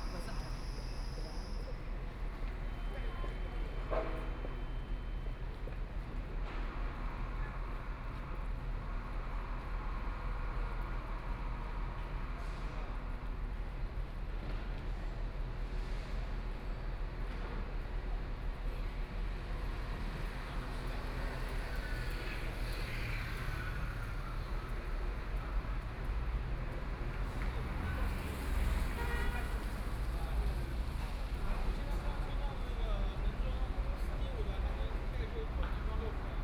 Tianjin Road, Shanghai - Construction site noise
Construction site noise, Traffic Sound, Binaural recording, Zoom H6+ Soundman OKM II
Shanghai, China, 3 December, 11:05